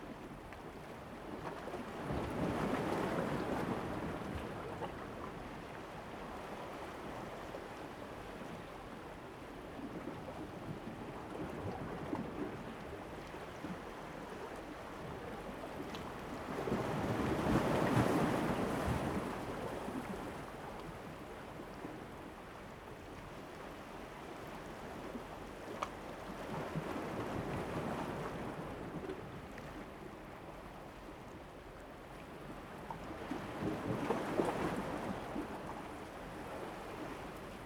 南濱公園, Hualien City - sound of the waves
sound of the waves
Zoom H2n MS+XY
Hualien City, Hualien County, Taiwan, August 2014